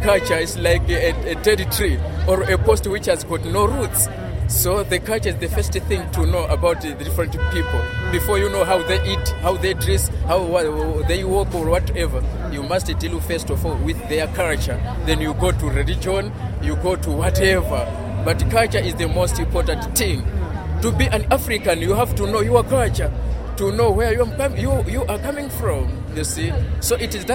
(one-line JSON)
{"title": "Hillbrow, Johannesburg, South Africa - hawkers", "date": "2013-03-04 11:14:00", "description": "A recording of Ras, a hawker who makes a living selling sweets, cigarets & clothing of the streets. He's from Malawi.", "latitude": "-26.20", "longitude": "28.05", "altitude": "1764", "timezone": "Africa/Johannesburg"}